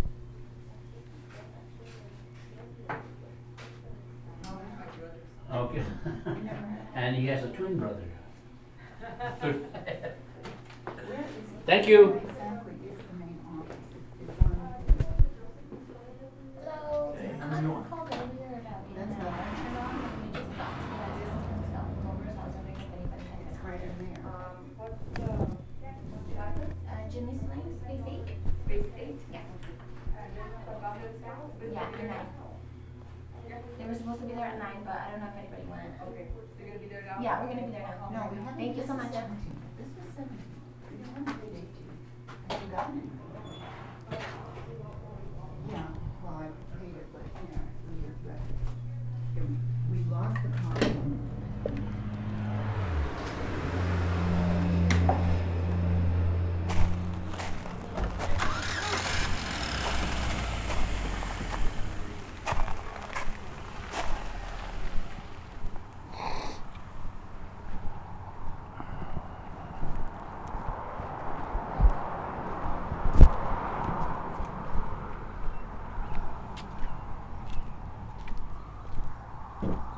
lots of office biz talk eaves drop
2 July 2018, 10:34